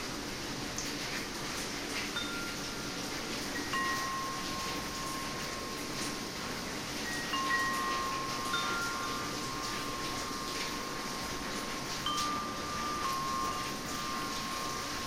selva, carrer de noblesa, thunderstorm, rain & wind chimes
thunderstorm in the evening, the wind and the rain play with two wind chimes
soundmap international: social ambiences/ listen to the people in & outdoor topographic field recordings
7 August 2009